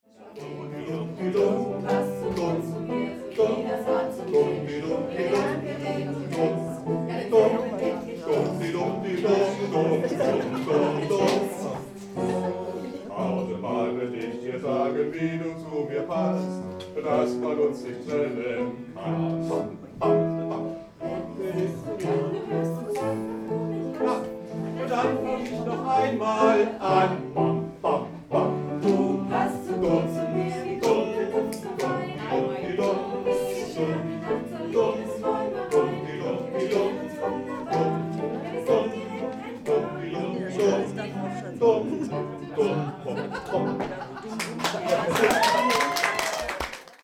Salon Petra - geburtstag / birthday
22.03.2009 00:05 1st birthday of salon petra under new owners
Berlin, Germany, 22 March 2009, 00:05